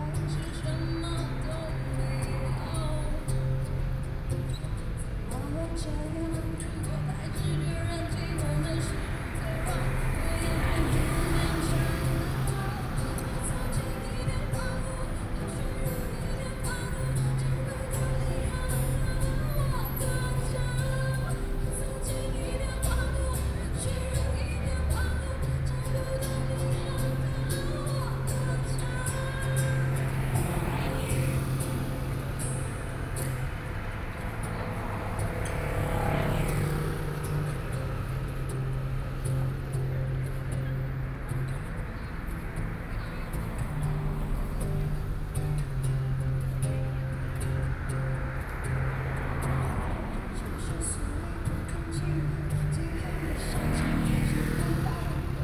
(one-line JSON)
{"title": "Zhongshan S. Rd., Taipei City - Mix", "date": "2013-08-09 19:40:00", "description": "Place the music and traffic noise, Sony PCM D50 + Soundman OKM II", "latitude": "25.04", "longitude": "121.52", "altitude": "8", "timezone": "Asia/Taipei"}